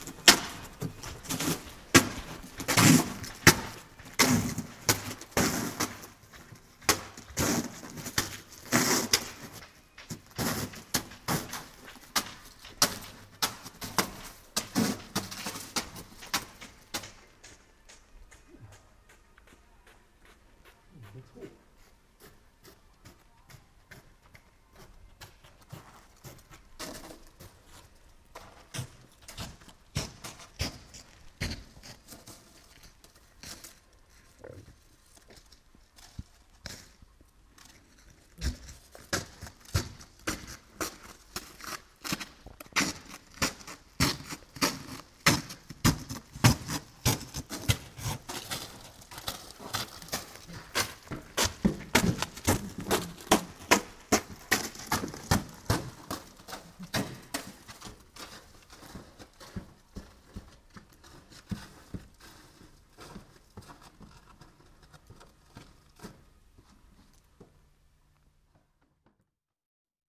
cracking snow in Stadt Wehlen: Germany
16 January 2009, 21:42